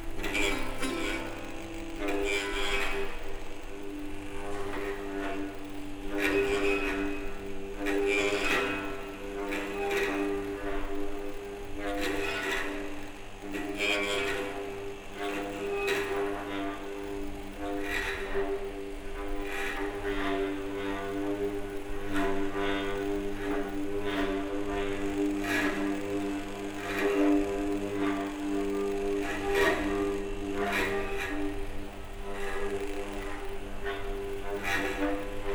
Malpartida de Cáceres, Cáceres, Spain - Sound supture - Self-oscillating guitar
Fluxus Sound sculpture (self oscillating motor on acoustic guitar). MS recording (Fostex FR2 LE + AKG Blue line 91/94)